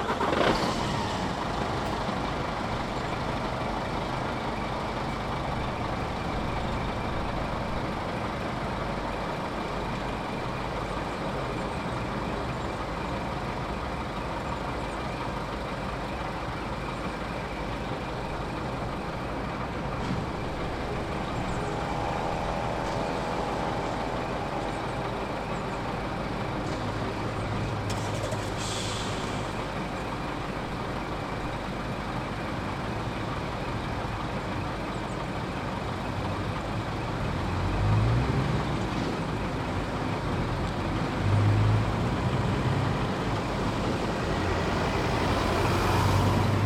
Hoog Buurloo, Apeldoorn, Nederland - Motorway Service Area 'Lucasgat’
Recording made while resting at the ‘Motorway Service Area Lucasgat’ on the A1 highway from Amersfoort to Apeldoorn. I placed my Zoom recorder for short time on the roof of my car. Slightly windy.